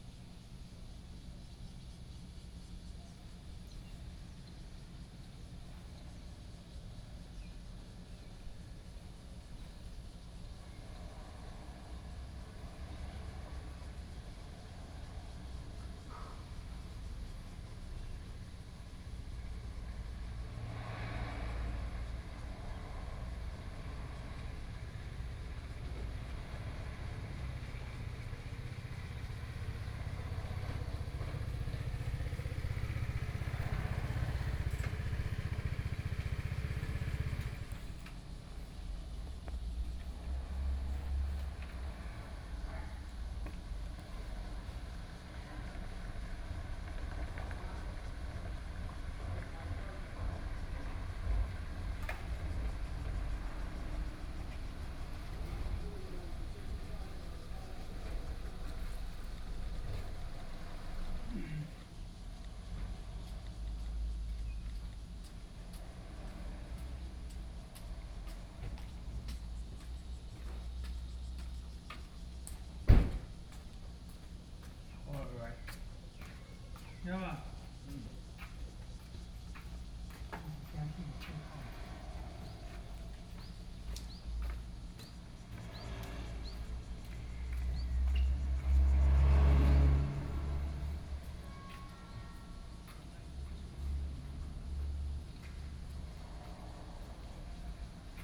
Luye Station, Luye Township - Quiet little station
Quiet little station, At the station, Traffic Sound
Taitung County, Taiwan, 7 September, ~09:00